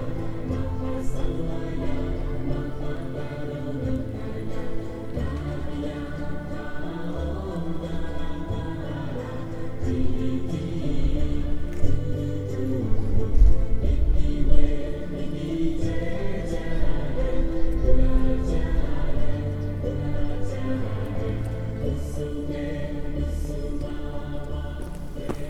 Гандан тэгчинлин хийд - Gandantegchinlin monastery - in the courtyard
Gandantegchinlin monastery - courtyard - music from the monastery - pigeons - people walking by